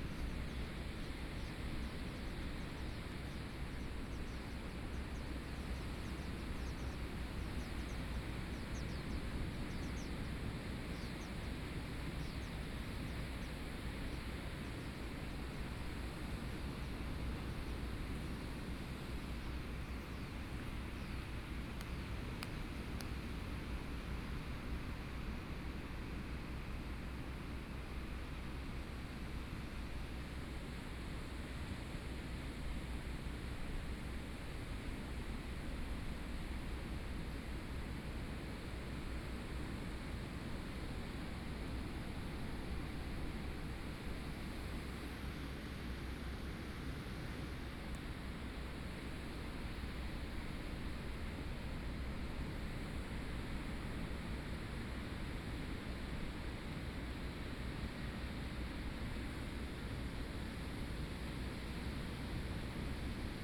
頭城鎮大坑里, Yilan County - Streams to the sea
Streams to the sea, Sound of the waves
Sony PCM D50+ Soundman OKM II